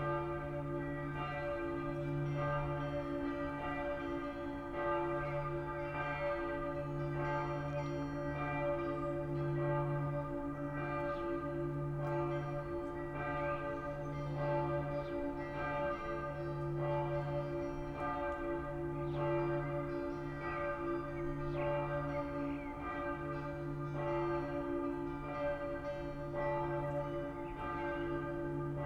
Limburg an der Lahn, Deutschland - various church bells, walking
walk through the narrow streets around the Limburger Dom, 6pm churchbells from the cathedral and others
(Sony PCM D50, DPA4060)